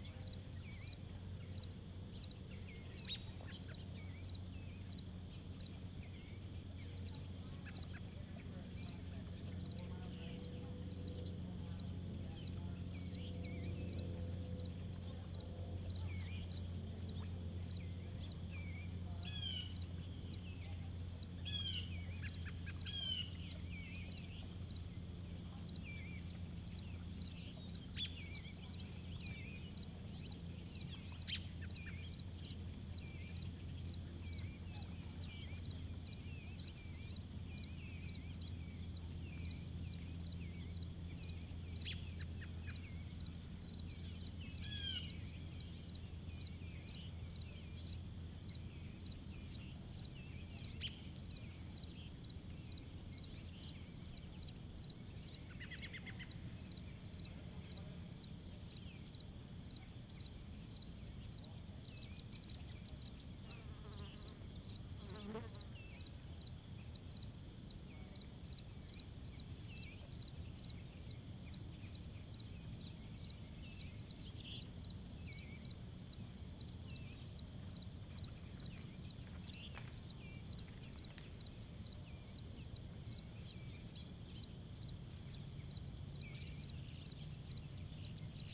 Morning sounds from a field overlooking the Meramec River.
Missouri, United States of America, 11 October 2020